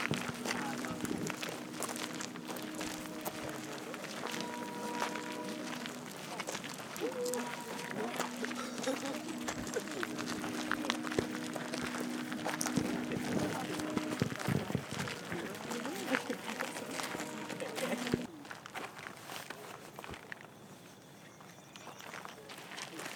Te Atatu Peninsula, Auckland, New Zealand - Dawn Opening Ceremony
Dawn opening ceremony for the 2016 Harbourview Sculpture Trail